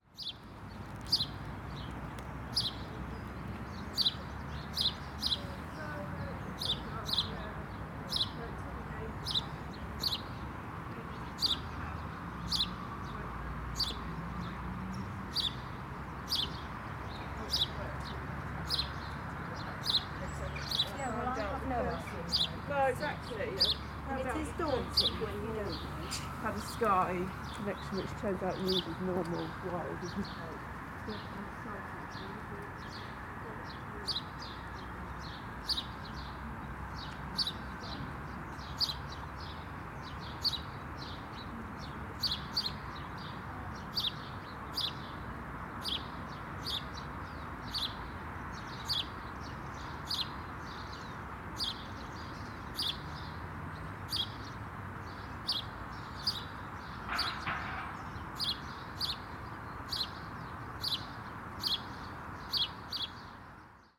{"title": "Contención Island Day 60 outer southeast - Walking to the sounds of Contención Island Day 60 Friday March 5th", "date": "2021-03-05 10:39:00", "description": "The Drive Moor Crescent Moorfield Highbury Oakland Road\nSparrows delight in the hedge\nand a rotted board in the eaves", "latitude": "54.99", "longitude": "-1.61", "altitude": "62", "timezone": "Europe/London"}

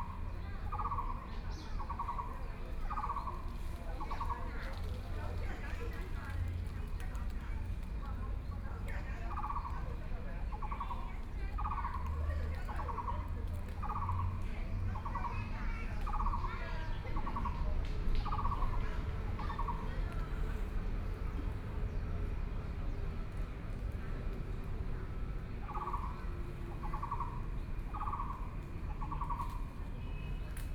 {"title": "BiHu Park, Taipei City - in the Park", "date": "2014-05-04 11:34:00", "description": "Frogs sound, Insects sound, Birdsong", "latitude": "25.08", "longitude": "121.59", "altitude": "19", "timezone": "Asia/Taipei"}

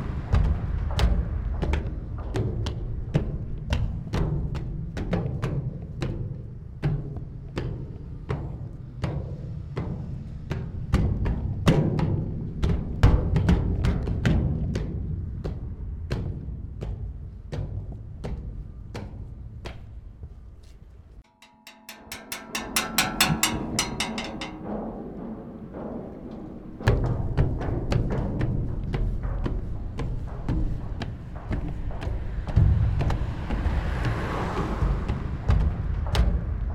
{"title": "Petit Maroc; Saint-Nazaire, France - Pont levant", "date": "2015-09-22 20:00:00", "description": "Bruits de pas sur le pont levant", "latitude": "47.27", "longitude": "-2.20", "altitude": "4", "timezone": "Europe/Paris"}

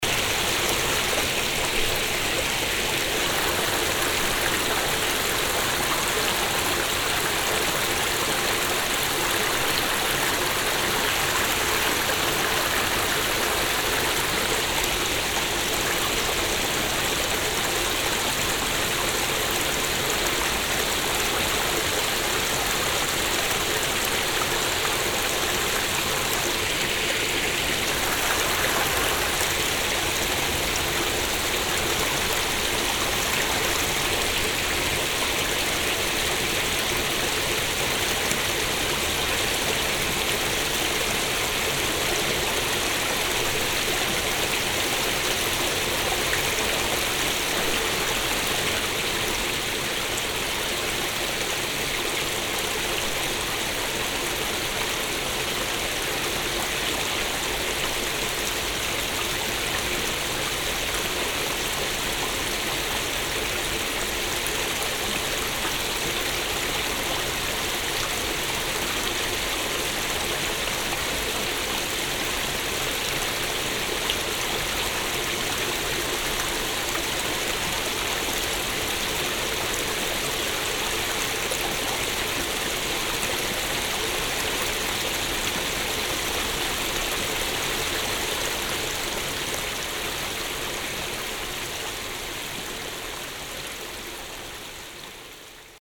{"title": "lellingen, bridge, small stream", "date": "2011-08-03 19:14:00", "description": "The sound of the small stream that crosses the small town here recorded under a bridge. Here harsh, noisy and fast.\nLellingen, Brücke, kleiner Bach\nDas Geräusch von einem kleinen Bach, der durch die kleine Ortschaft fließt, hier aufgenommen unter einer Brücke. Hart, geräuschvoll und schnell.\nLellingen, pont, petit ruisseau\nLe bruit d’un petit ruisseau qui traverse la petite ville, enregistré ici sous un pont. Ici il sonne dur, sonore et rapide.\nProject - Klangraum Our - topographic field recordings, sound objects and social ambiences", "latitude": "49.98", "longitude": "6.01", "altitude": "293", "timezone": "Europe/Luxembourg"}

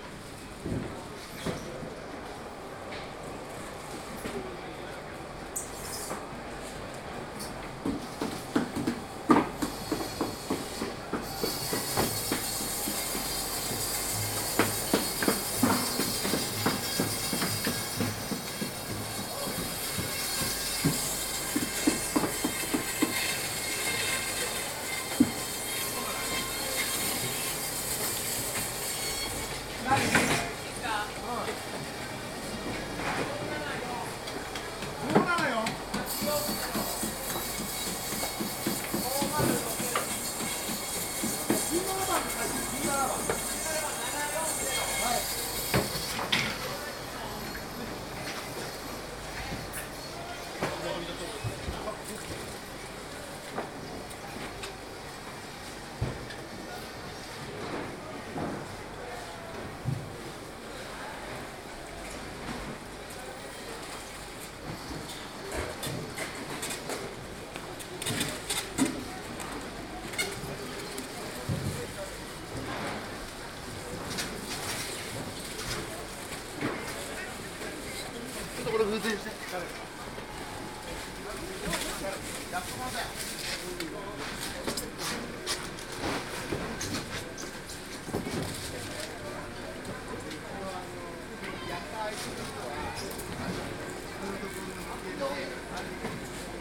{"title": "Tsukiji Market, Chome Tsukiji, Chūō-ku, Tōkyō-to, Japan - Early in the morning at the Tsukiji Fish Market", "date": "2017-02-14 07:50:00", "description": "The Tsukiji Fish Market is the stuff of legends; it's where all the fresh fish is purchased early in the morning to become sashimi and sushi later on the same day all over Tokyo. The size of the market and diversity of fish produce is incredible, and there is an amazing sense of many buyers and sellers quietly and efficiently setting about the day's trade. There are lethal little motorised trolleys that zip up and down the slender aisles between the vendors, piled high with boxes of fish. Great band-saws deal with the enormous deep-frozen tuna that come in, and there are squeaky polystyrene boxes everywhere full of recently caught seafood.", "latitude": "35.67", "longitude": "139.77", "altitude": "12", "timezone": "Asia/Tokyo"}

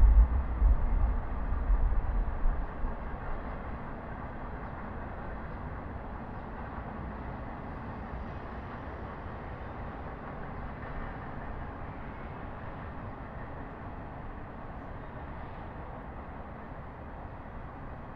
{"title": "N Cascade Ave, Colorado Springs, CO, USA - McGregorDormWestSide27April2018", "date": "2018-04-27 08:20:00", "description": "Recorded on west end of McGregor Dorm at Colorado College. Recorded with a Zoom H1 recorder at 8:20 am on a sunny morning. The soundscape includes the hum of the highway, a train, and birds singing in the background.", "latitude": "38.85", "longitude": "-104.83", "altitude": "1840", "timezone": "America/Denver"}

